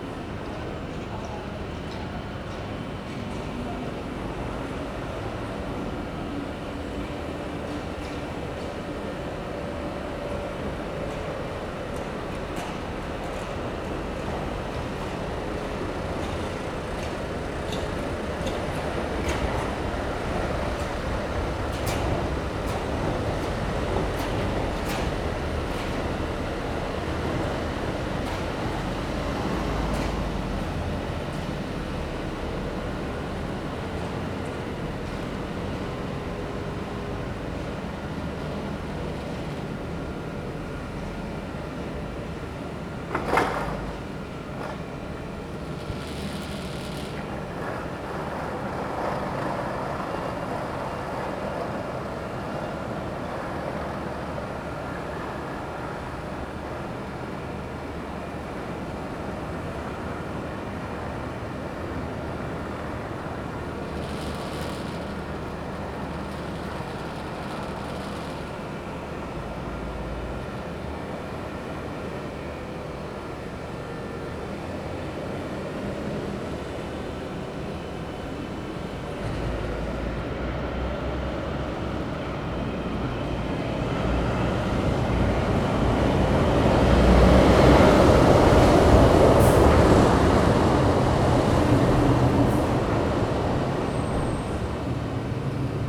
{"title": "Dominikánská, Brno-střed-Brno-město, Czechia - morning traffic", "date": "2018-10-31 07:33:00", "description": "what you can hear early morning, from the window of the guest room of the Brno art house.", "latitude": "49.19", "longitude": "16.61", "altitude": "233", "timezone": "GMT+1"}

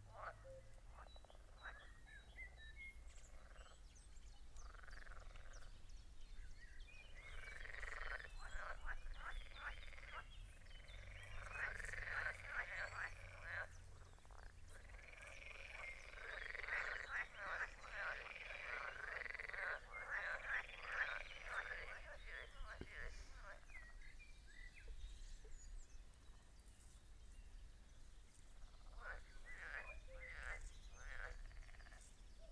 {"title": "Schloss Tornow Froschkonzert mit Kuckuck", "latitude": "53.06", "longitude": "13.29", "altitude": "45", "timezone": "Europe/Berlin"}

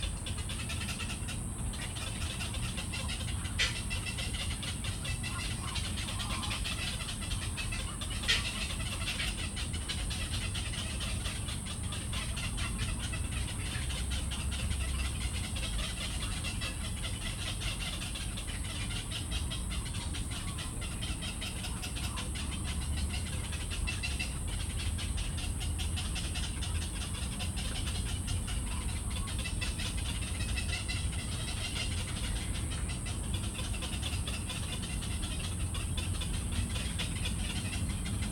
{"title": "大安森林公園, 大安區 Taipei City - Bird sounds", "date": "2016-08-17 20:03:00", "description": "Next to the ecological pool, Bird sounds, Voice traffic environment\nZoom H2n MS+XY+Sptial audio", "latitude": "25.03", "longitude": "121.53", "altitude": "8", "timezone": "Asia/Taipei"}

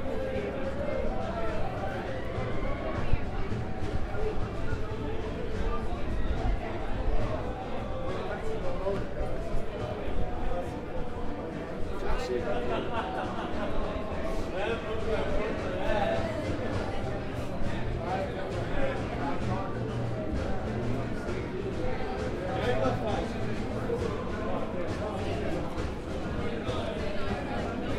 {"title": "Galway City, Co. Galway, Ireland - The Sunken Hum Broadcast 76 - St. Patrick's Eve in Galway", "date": "2013-03-16 23:02:00", "description": "I was on a quiet street in Galway and heard a busker playing the fiddle around the bend. As I turned the corner to catch a glimpse of the musician I saw a street filled with utter madness. The busker only played the chorus of tunes as people walked by and then completely stopped when they had passed beyond the point of tossing in a few coins. The he started right back in on the same chorus as the next person approached. As I continued down there were groups of lads singing arm in arm, lost tourists, wobbly heeled ladies and at the top of the road, a group of frenized improv drummers beating on rubbish bins.\nThis is recorded while walking about a block with a Zoom H4 held inside a canvas bag to block the wind.", "latitude": "53.27", "longitude": "-9.05", "altitude": "8", "timezone": "Europe/Dublin"}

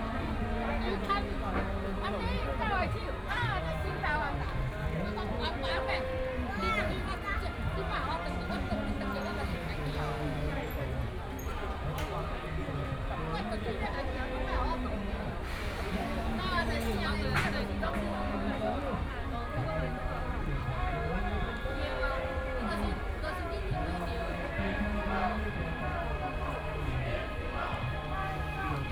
{"title": "Peace Memorial Park, Taiwan - Memorial Day rally", "date": "2014-02-28 15:37:00", "description": "228 rally to commemorate the anniversary event .Sunny afternoon\nPlease turn up the volume a little\nBinaural recordings, Sony PCM D100 + Soundman OKM II", "latitude": "25.04", "longitude": "121.51", "timezone": "Asia/Taipei"}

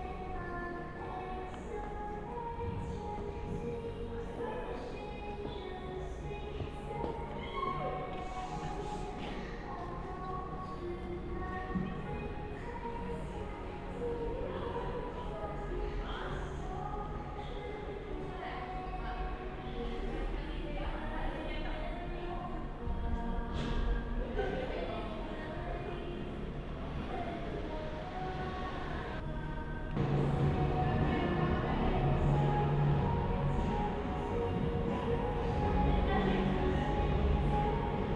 {"title": "Volos, Greece - Dance School", "date": "2016-02-02 20:20:00", "description": "Sounds from a girls' dance lesson, recorded from the first floor, across the street.", "latitude": "39.37", "longitude": "22.95", "altitude": "13", "timezone": "Europe/Athens"}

